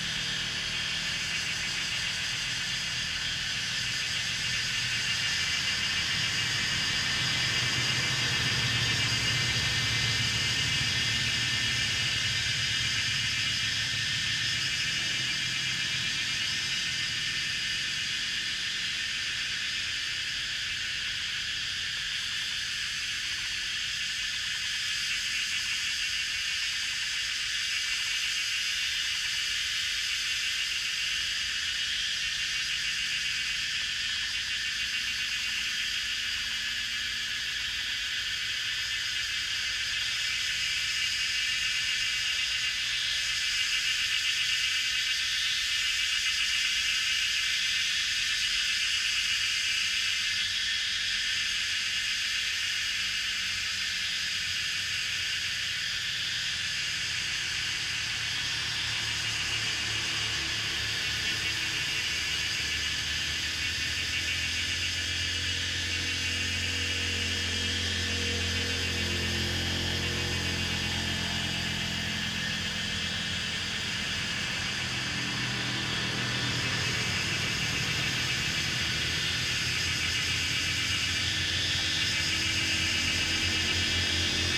Cicadas cry, Bird sounds, Traffic Sound
Zoom H2n MS+XY
16 May 2016, ~17:00